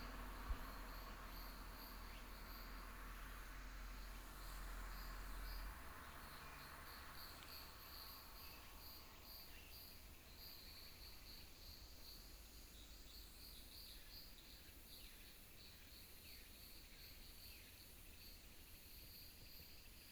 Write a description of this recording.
traffic sound, Bird call, wind, Small road, Forest area